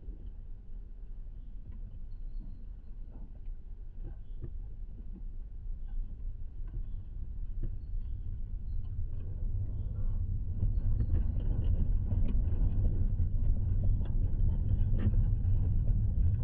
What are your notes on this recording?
contact microphones on abandoned tennis court's fence